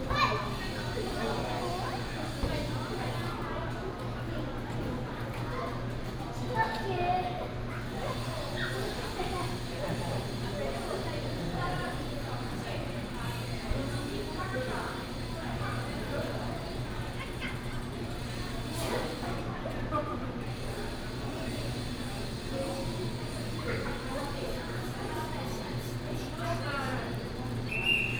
Ruifang Station, New Taipei City, Taiwan - in the train station platform
in the train station platform, Station Message Broadcast, Construction noise, Child